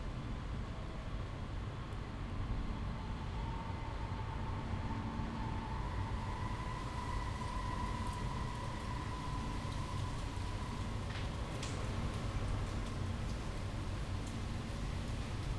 Berlin Bürknerstr., backyard window - storm approaching
autumn morning, a storm is approaching, unusual sounds in the backyard, unquiet air
(Sony PCM D50, Primo EM172)